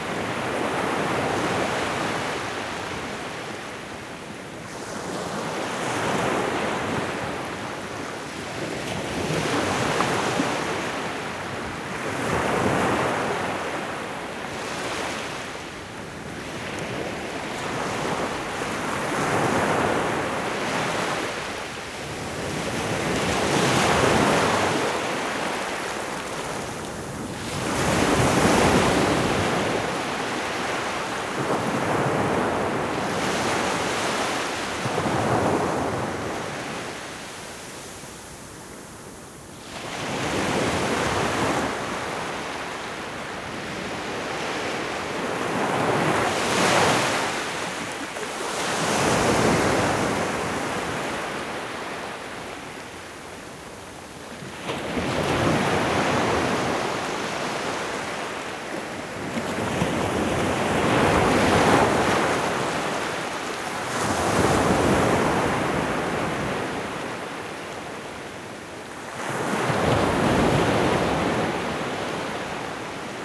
Klong Muang Beach - Close recording of the waves on the beach, in Thailand
During the night at Klong Muang Beach in Thailand, microphone close to the waves on the beach.
Recorded by an ORTF Setup Schoeps CCM4x2 in a Cinela Windscreen
Recorder Sound Devices 633
Sound Ref: TH-181019T02
GPS: 8.051151, 98.755929
ประเทศไทย